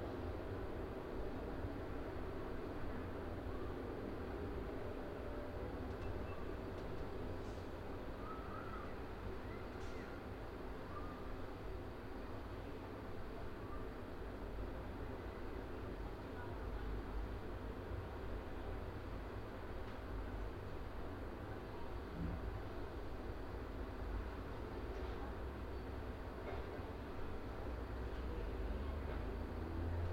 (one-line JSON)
{"title": "R. Ipanema - Mooca, São Paulo - SP, Brasil - INTERNA CASA - CAPTAÇÃO APS UAM 2019", "date": "2019-05-01 15:00:00", "description": "Captação de áudio interna para cena. Trabalho APS - Disciplina Captação e edição de áudio 2019/1", "latitude": "-23.55", "longitude": "-46.61", "altitude": "740", "timezone": "America/Sao_Paulo"}